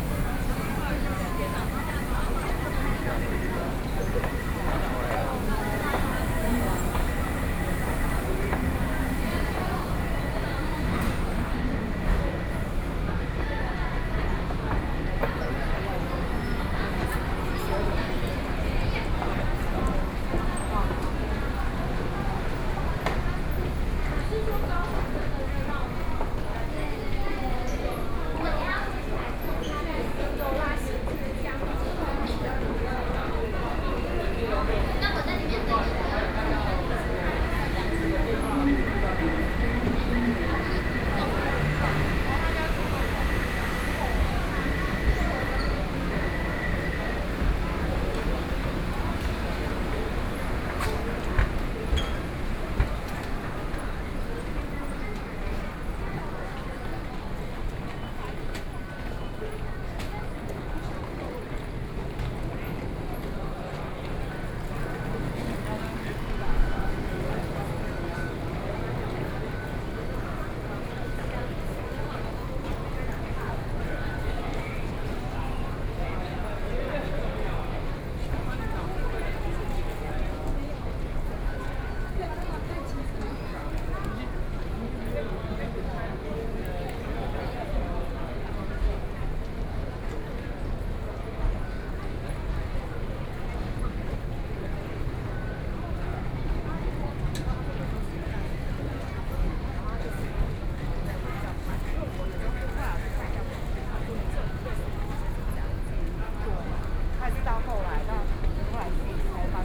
Zhongxiao Fuxing Station, Taipei - soundwalk
From the station on the ground floor, Then through the department store, Into the station's underground floors, Sony PCM D50 + Soundman OKM II
Daan District, Taipei City, Taiwan, 30 September 2013